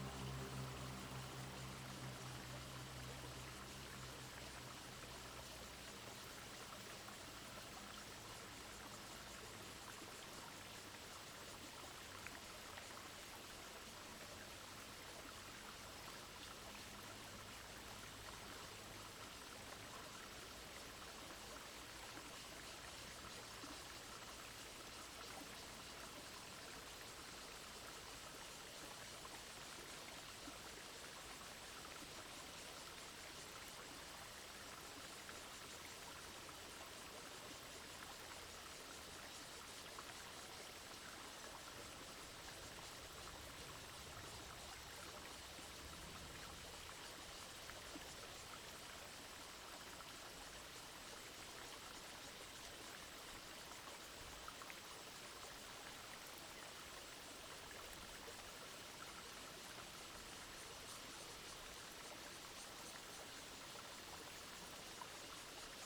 東河村, Donghe Township - streams and Cicadas
The sound of water streams, Cicadas sound
Zoom H2n MS +XY